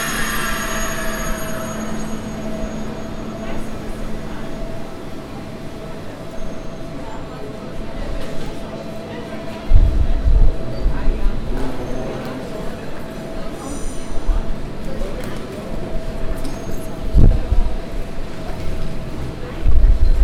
Ankunft und Aussteigen in Basel
Basel Bahnhof, Ausstieg und Weiterfahrt im Tram